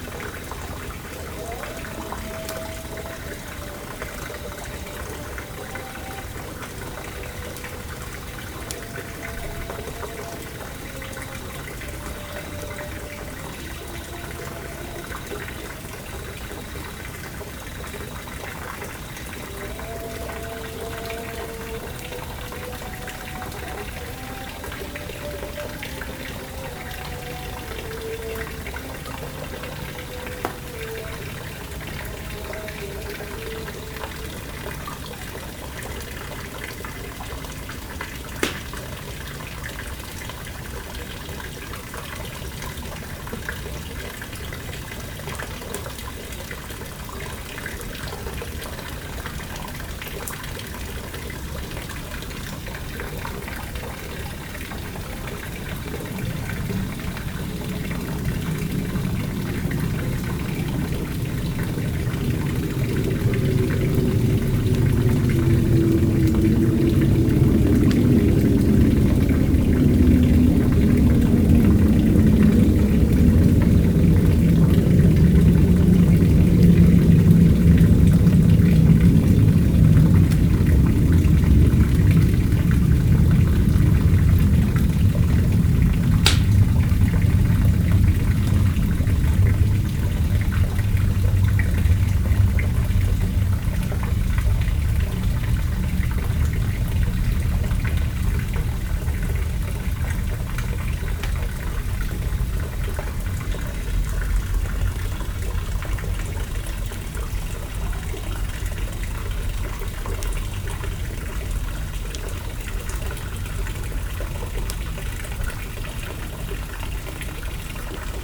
A quiet private garden in Kensington suburb of Johannesburg, a fountain, a charcoal fire, and a distant evening call for prayer from a mosque…